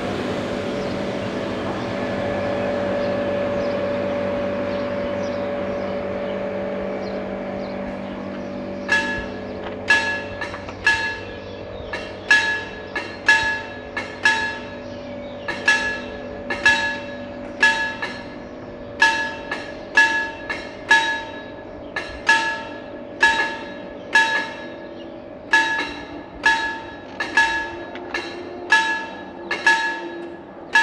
stazione di treno altavilla, Italy - stazione
The beautiful train line between Benevento and Avellino in the rural area of Irpina is threatened to be shut down in October 2012. Also the line between Avellino and Rocchetta is facing its end. The closing of the rail lines is a part of a larger shut down of local public transport in the whole region of Campania.
The recordings are from the train station of Altavilla and composed as an homage to the Benevento-Avellino -and Avellino-Rocchetta line.
Recorded with a shotgun and a Lavalier microphone.
Province of Avellino, Italy, 3 July 2012